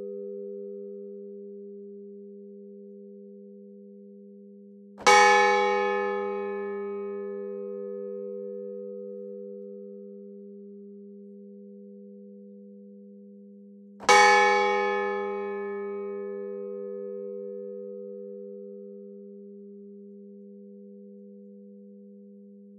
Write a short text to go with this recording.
Autheuil (Eure-et-Loir), Église St-Avit, Le Glas